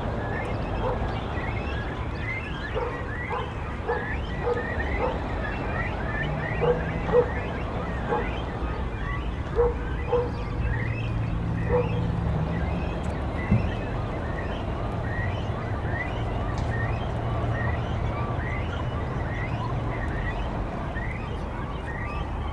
Sortedam Dossering, København, Denmark - Young cormorants on lake
Young cormorants calls, located on a small island on the northen lake. Planes, pedestrians. Distant metro construction site noise.
Cris de jeunes cormorans. Un avion. Des passants. Bruit lointain du chantier du métro de Copenhague.